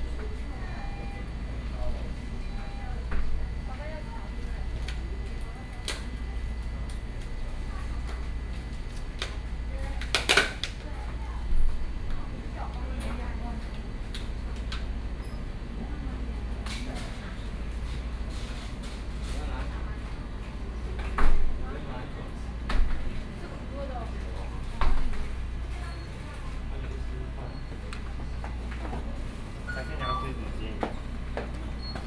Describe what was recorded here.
In convenience stores, The weather is very hot, Binaural recordings